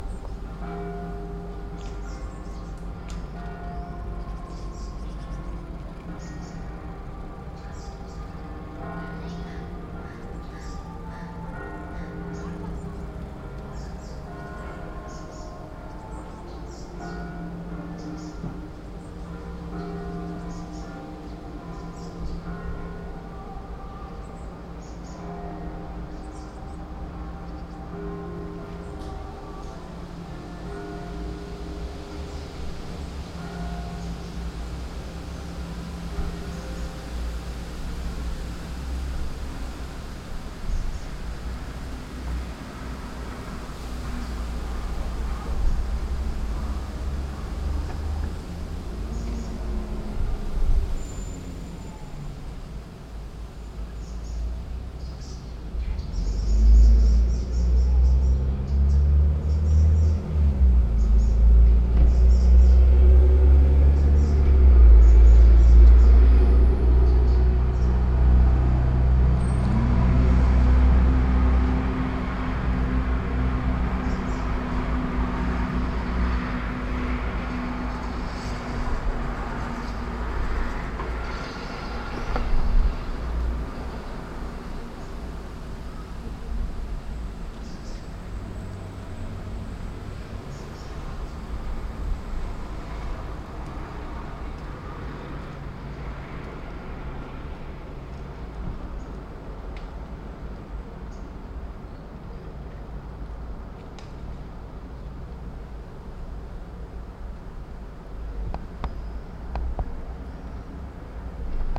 Sunday soundscape on my window. Juozapas Kalnius
Klaipėdos apskritis, Lietuva, June 2020